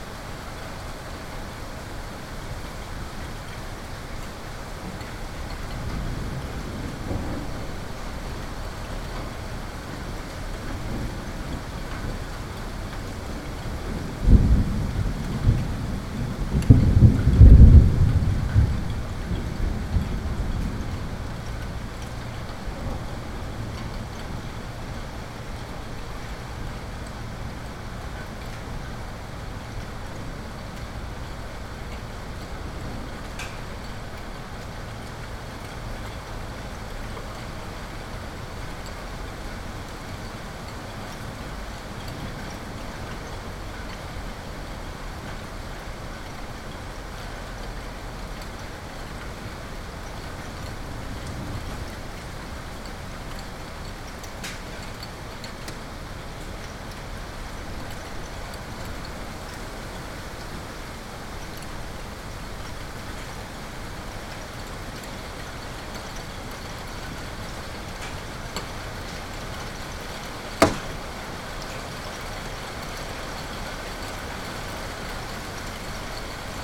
Recording of a heavy storm with hailstone and thunders.
AB stereo recording (17cm) made with Sennheiser MKH 8020 on Sound Devices Mix-Pre6 II.
województwo małopolskie, Polska, 9 July 2021